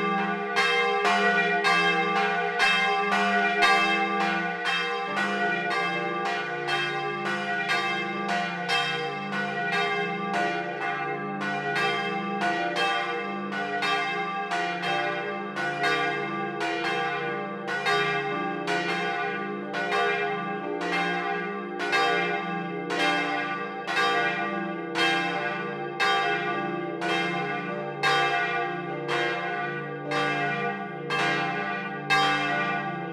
vianden, bell tower
Inside the bell tower of Vianden.
First recording - the bells starting one after the other until they all play and finish together.
Vianden, Glockenturm
Im Glockenturm von Vianden. Erste Aufnahme - die Glocken beginnen eine nach der anderen bis alle zusammen klingen und zusammen aufhören.
Vianden, clocher
À l’intérieur du clocher de l’église de Vianden.
Premier enregistrement – les cloches se lancent les unes après les autres puis jouent et s’arrêtent ensemble.
Project - Klangraum Our - topographic field recordings, sound objects and social ambiences